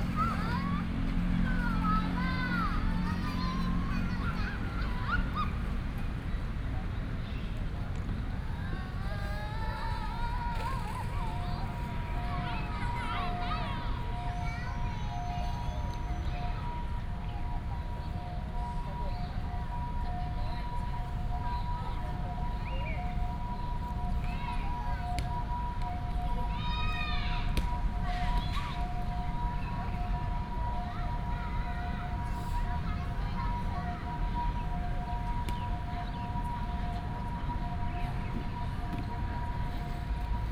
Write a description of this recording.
in the Park, Traffic sound, birds sound, Children's play area